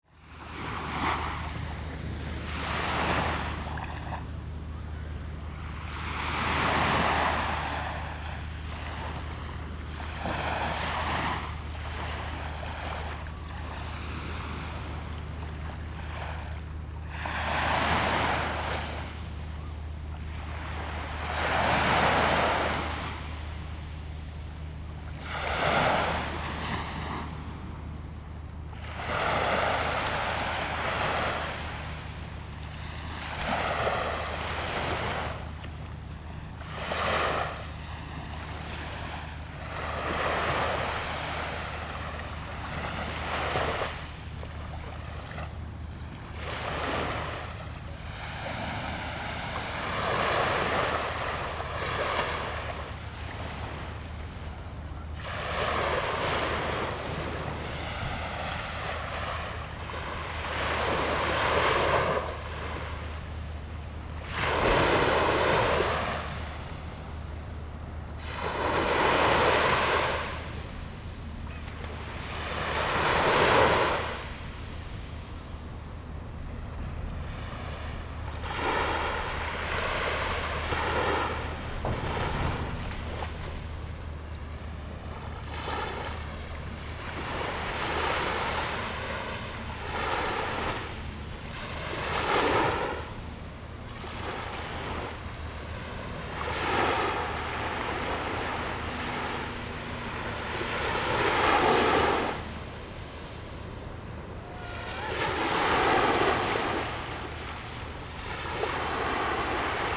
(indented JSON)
{
  "title": "Wanli Dist., New Taipei City - sound of the waves",
  "date": "2016-08-04 10:50:00",
  "description": "sound of the waves, At the beach\nZoom H2n MS+XY +Sptial Audio",
  "latitude": "25.18",
  "longitude": "121.69",
  "altitude": "60",
  "timezone": "Asia/Taipei"
}